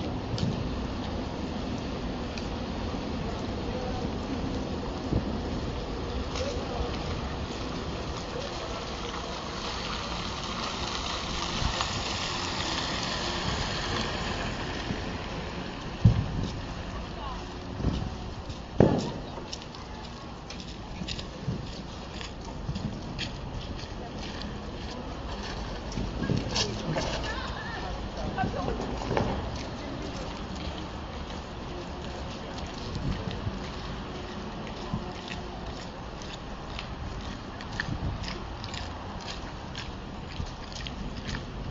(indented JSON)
{
  "title": "tauwetter at friedrichstraße station",
  "description": "after eight weeks of frost, snow in berlin is finally melting. large amounts of frozen matter have clustered to the rim of the roof of Friedrichstraße station and may fall down at any time. the local firebrigade has set out to climb public buildings and shovel it down to the ground. here we have the deep humming sound of the floes crashing onto the street...",
  "latitude": "52.52",
  "longitude": "13.39",
  "altitude": "35",
  "timezone": "Europe/Berlin"
}